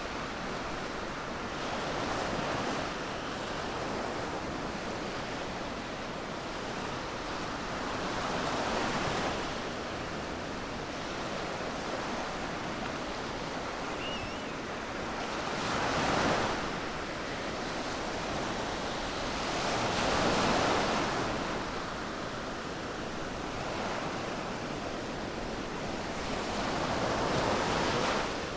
Av. Alacant, Cullera, Valencia, España - Anochecer en la Playa de Cullera
Anochecer en la playa de Cullera. Dando un paseito y nos paramos para grabar un ratito al lado de la orilla mientras el sol se ponía a nuestras espaldas.
Disfrutando cerca del sonido de mi mar :)